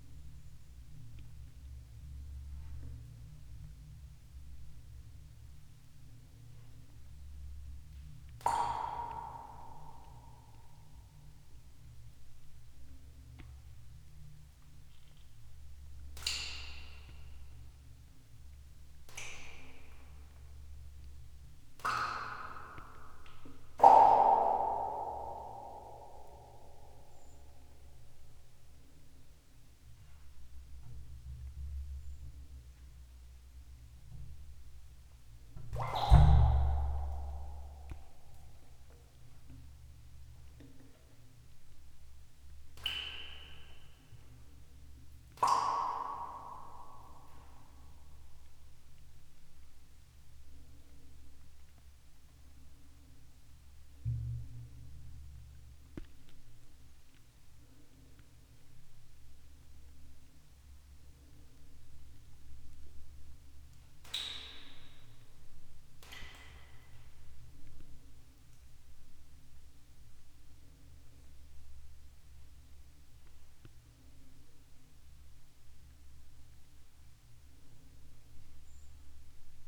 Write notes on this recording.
quiet lands ... where silence is literary audible